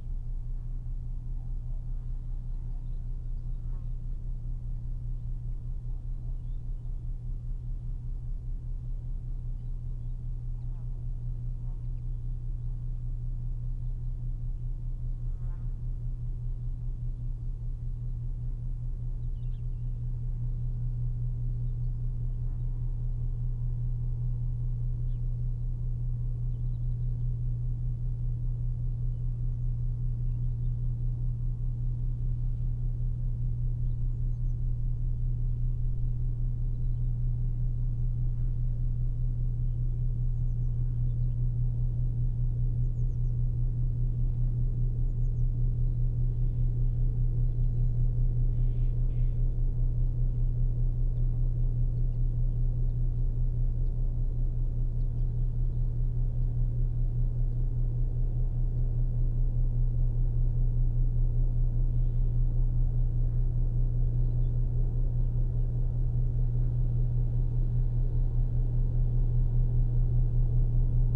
Quevillon, France - Boats
Three industrial boats are passing by on the Seine river, the Bangkok, the Jasmine C and the Orca. All these boats are going to Rouen industrial harbor.